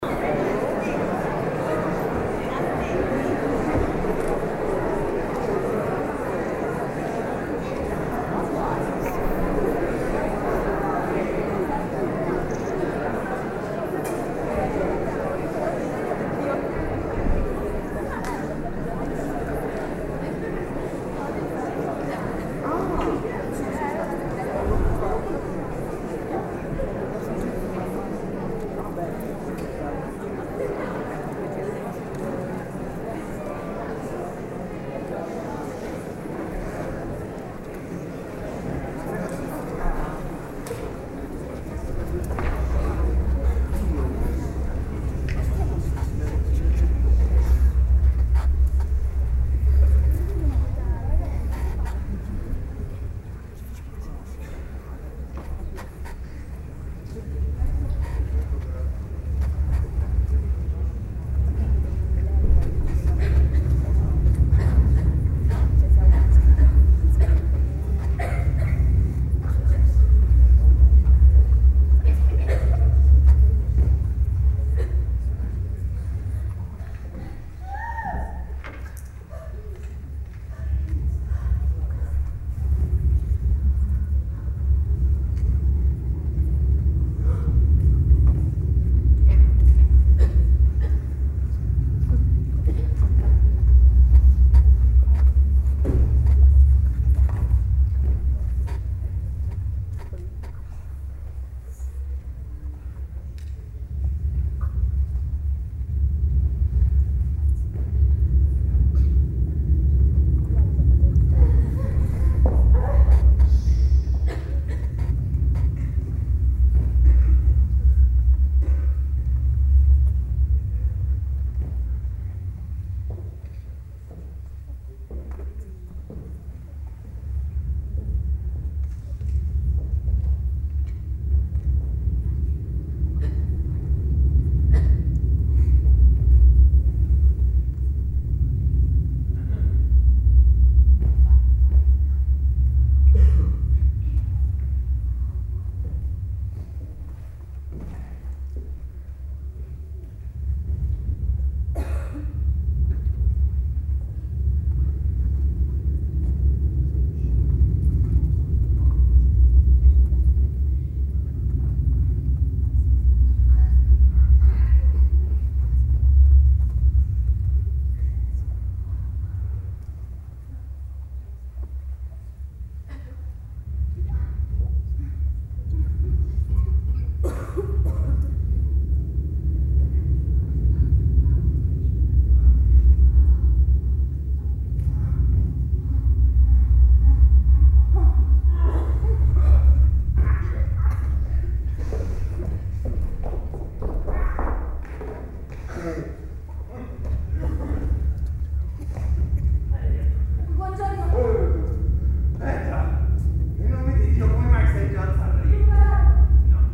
waiting for the second act
theater in the city, between the first and second act of Hedda Gabler, Ibsen
MAR, Italia